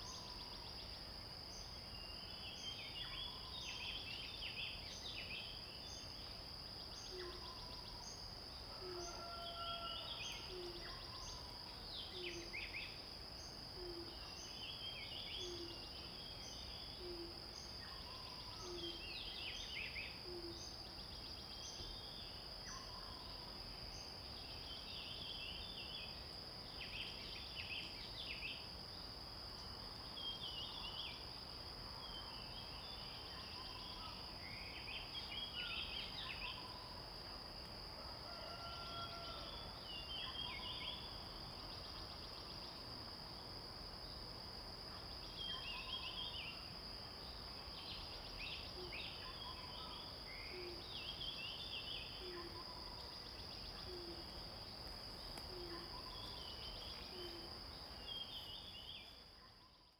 Shuishang Ln., Puli Township - early morning
early morning, Sounds of various birds
Zoom H2n MS+XY